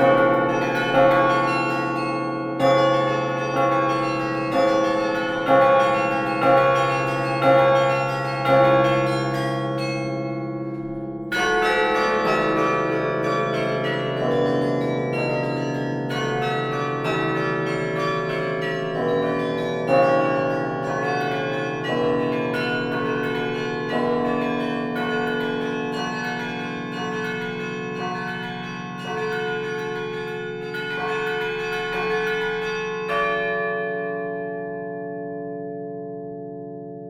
Nivelles, Belgique - Nivelles carillon
Recording of a carillon concert in the Nivelles collegiale church. Performer is Toru Takao, a japanese master of carillon living in Germany. He's playing Danse Macabre from Saint-Saëns.
Nivelles, Belgium, 8 October 2010, 15:05